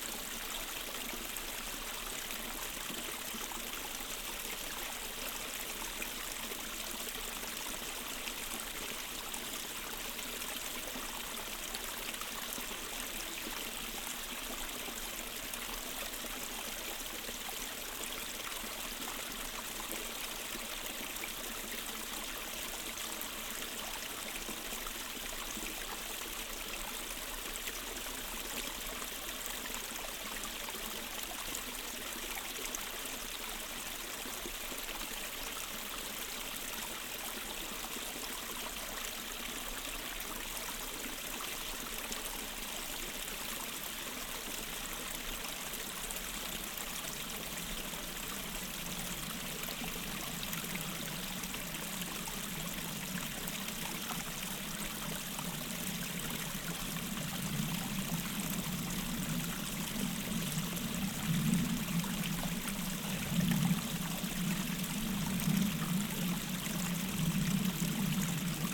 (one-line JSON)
{"title": "Utena, Lithuania, hidden streamlets", "date": "2022-02-03 15:20:00", "description": "Sennheiser ambeo headset. Standing at the hidden streamlets", "latitude": "55.49", "longitude": "25.59", "altitude": "106", "timezone": "Europe/Vilnius"}